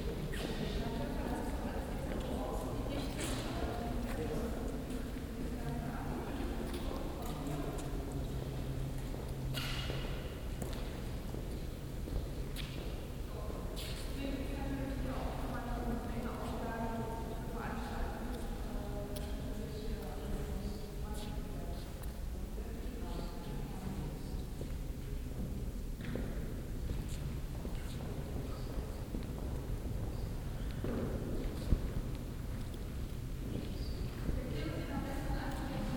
essen, gelände zeche zollverein, salzlager, kabakov installation
ausstellungshalle im ehemaligen salzlager auf dem gelände des weltkulturerbes zeche zollverein, besuchergruppe und schritte in die installation "der palast der projekte" von ilya & emilia kabakov
soundmap nrw
social ambiences/ listen to the people - in & outdoor nearfield recordings